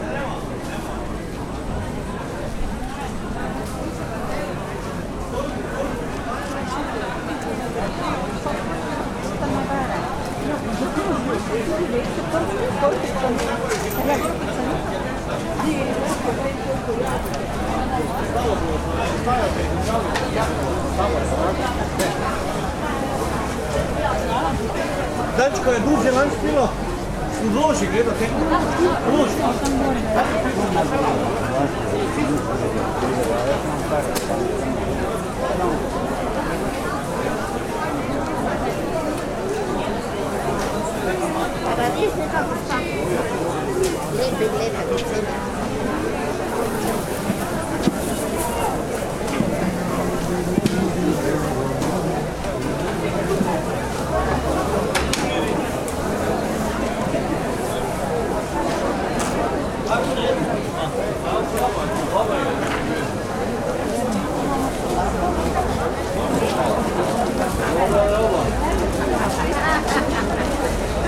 Maribor, Vodnikov Trg, market - market walk before noon
slow walk over crowded market, flood of plastic bags
Maribor, Slovenia, 11 August, ~11:00